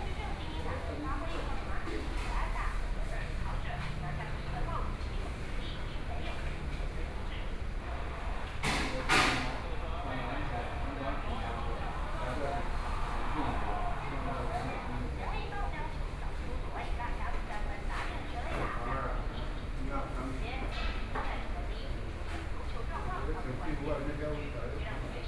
Sec., Zhongshan Rd., 宜蘭市和睦里 - In the restaurant
In the restaurant, Traffic Sound
Sony PCM D50+ Soundman OKM II
Yilan City, Yilan County, Taiwan